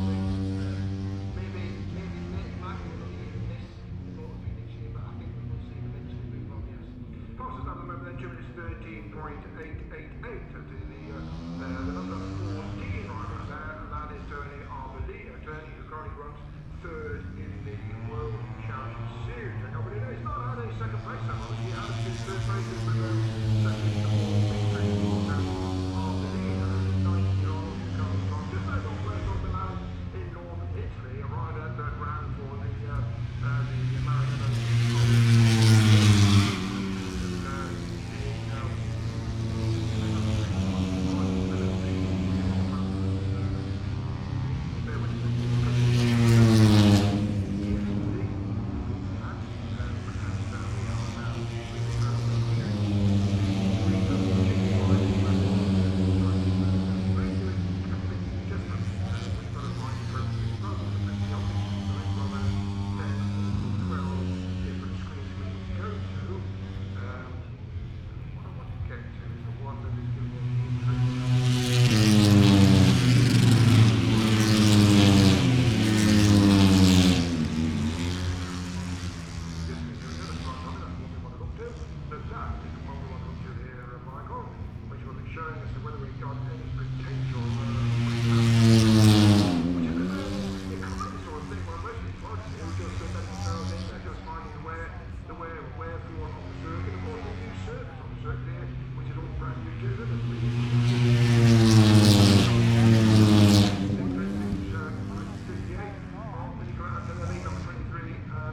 Silverstone Circuit, Towcester, UK - british motorcycle grand prix 2019 ... moto three ... fp1 contd ...
british motorcycle grand prix 2019 ... moto three ... free practice one ...contd ... inside maggotts ... some commentary ... lavalier mics clipped to bag ... background noise ... the disco in the entertainment zone ..?